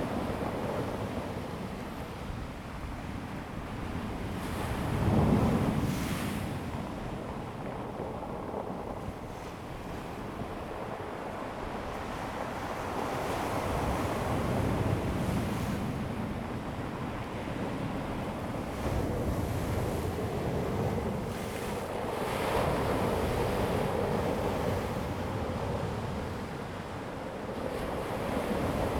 南田村, Daren Township - Sound of the waves
Sound of the waves, In the circular stone shore, The weather is very hot
Zoom H2n MS +XY